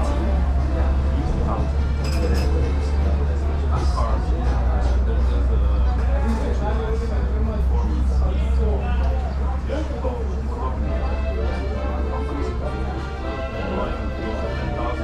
meeting andrej in a nearby cafe, there was live music coming from the kitchen